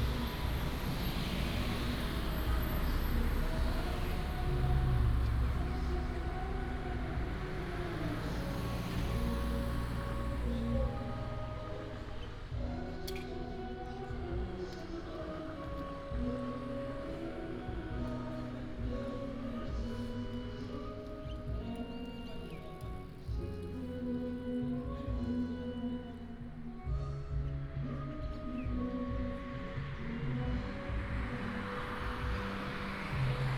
南迴公路19號, Xinxianglan, Taimali Township - Beside the road
Beside the road, Traffic sound, Bird cry, Karaoke